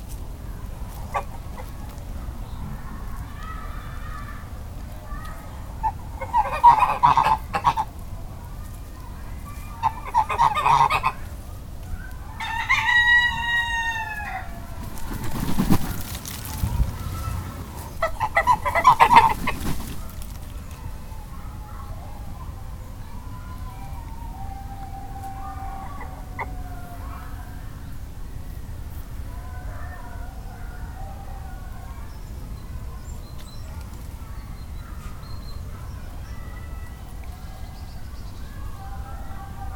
Court-St.-Étienne, Belgique - Geese
In a rural place, a rooster is shouting, two runners saying hello and two geese coming to see what's happening.
Court-St.-Étienne, Belgium, 11 September 2015, 11:55